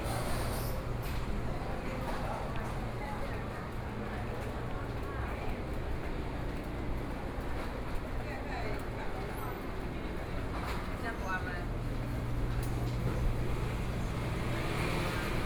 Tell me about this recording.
walking in the Traditional Market, Sony PCM D50 + Soundman OKM II